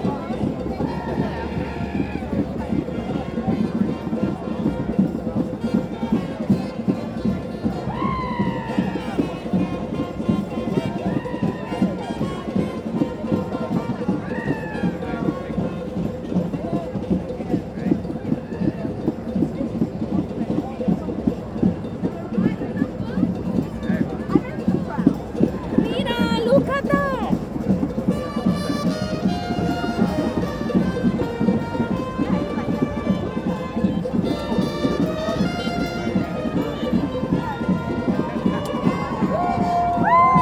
Oxford Circus Underground Station, Oxford St, Soho, London, UK - Extinction Rebellion: Distant band and general hubbub